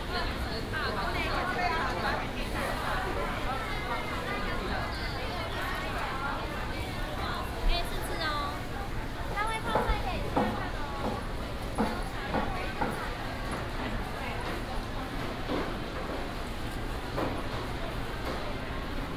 內新市場, Dali Dist., Taichung City - Walking in the market
Walking in the market, vendors peddling, Binaural recordings, Sony PCM D100+ Soundman OKM II